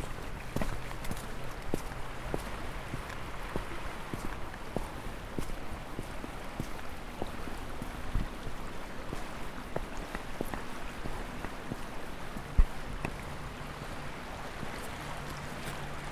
Łyna-Zamek - River Łyna near Olsztyn's castle (1)